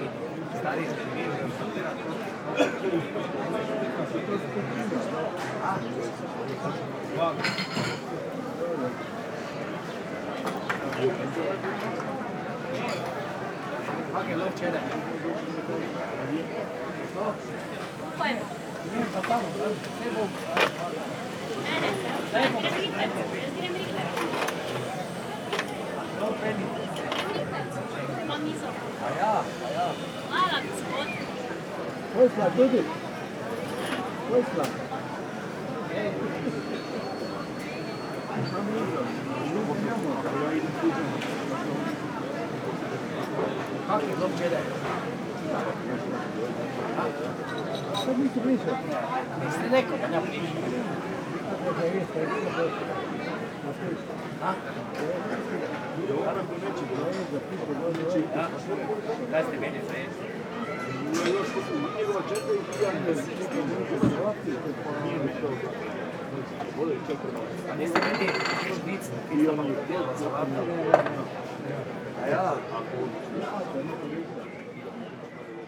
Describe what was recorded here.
two gentleman discussing television cables and what one of them ate at the party last night - schnitzel, potato and salad ...